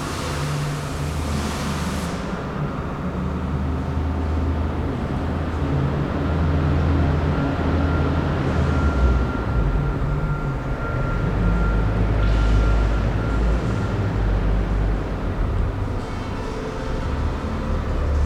SBG, Puigneró, antigua fábrica - Almacén Fundició Benito
Ambiente de trabajo en uno de los espacios de la antigua fábrica Puigneró que han sido reocupados por la Fundició Benito. Es Agosto y apenas hay una veintena de los alrededor de sesenta trabajadores habituales.